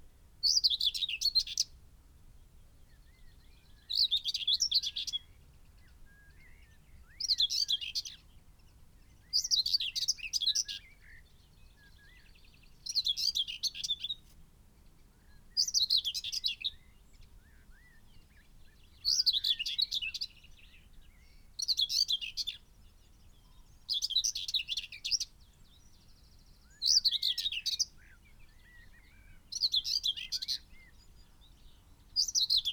Malton, UK - whitethroat song soundscape ...
whitethroat song soundscape ... dpa 4060s clipped to bag to zoom h5 ... bird calls ... song from ... chaffinch ... linnet ... blackbird ... dunnock ... skylark ... pheasant ... yellowhammer ... whitethroat flight song ... bird often visits song posts at distance ...
Yorkshire and the Humber, England, United Kingdom, 29 May 2022